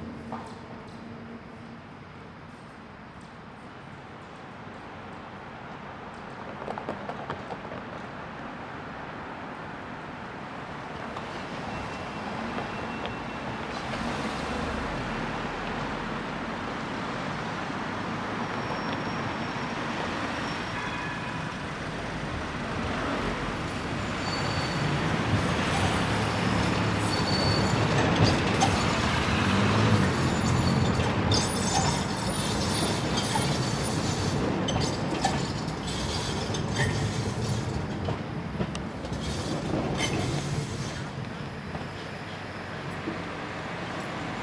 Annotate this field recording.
Morning ambience, sunny day. Tech Note : Sony PCM-D100 internal microphones, XY position.